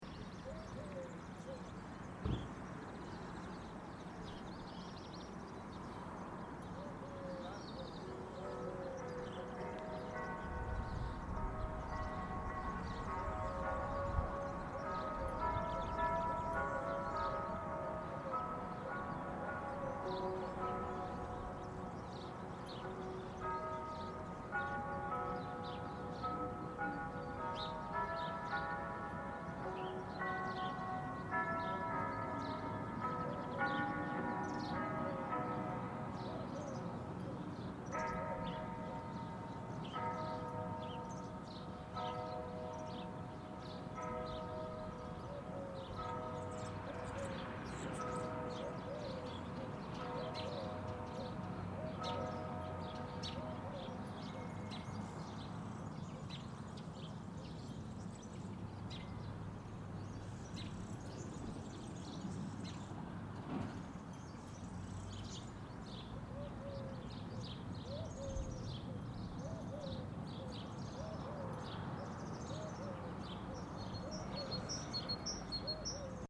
Rede, Vila Marim, Portugal Mapa Sonoro do Rio Douro Douro River Sound Map